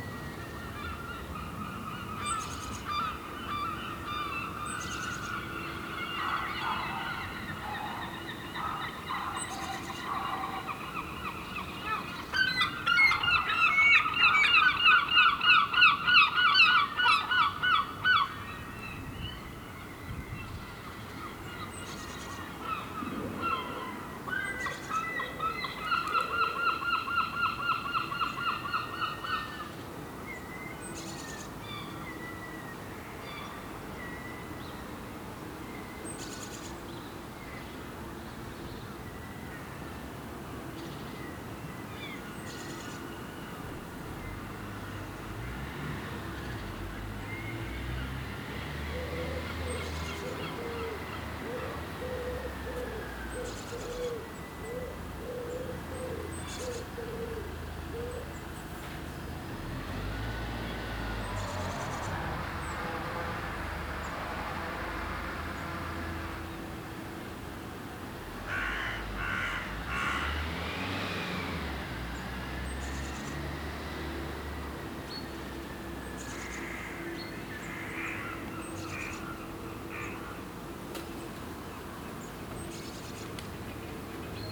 Scarborough, UK - Summer, St Mary's Church, Scarborough, UK
Binaural field recording at St Mary's Church, Scarborough, UK. Slight wind noise. Birds, seagulls, church bells